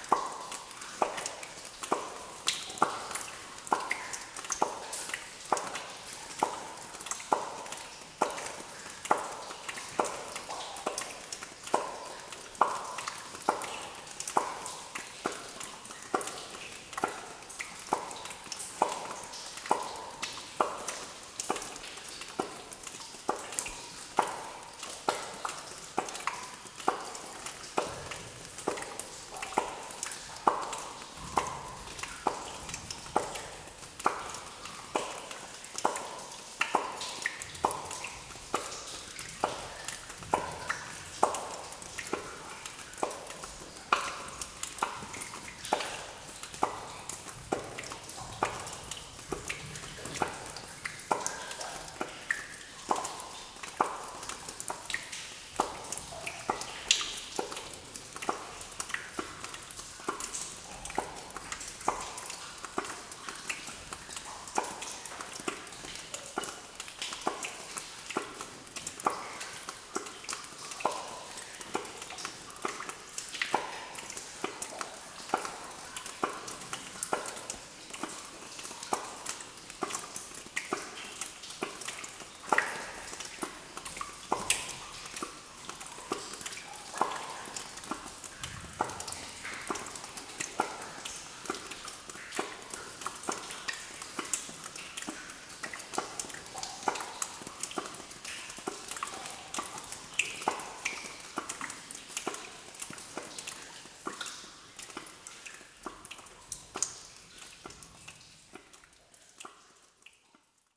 Differdange, Luxembourg - Rhythmic rain
Rhythmic rain in an underground mine, which access is very difficult.